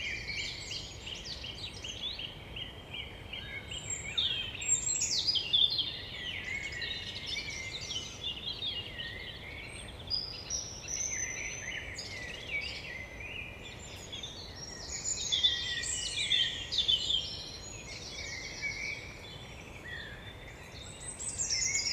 Recorded in Brockwell Park, London. Featuring Song Thrush, Wren, Blackbird, Coots, Robin and a brief fox at the end.
Recorded nearing the end of the first part of the lockdown in the UK. Some aircraft are present.
England, United Kingdom